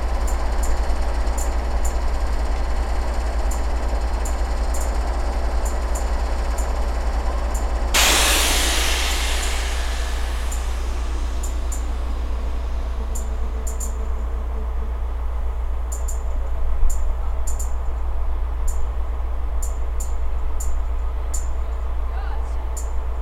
Vilnius train station platform sounds; recorded with ZOOM H5.